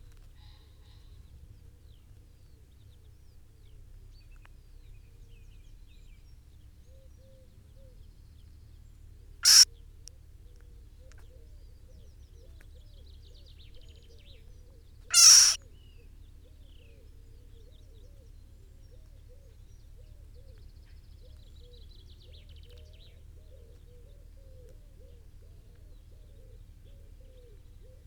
Luttons, UK - mole ... above ground ...
Mole ... above ground ... just a chance encounter ... parabolic ...
12 June 2014, 06:02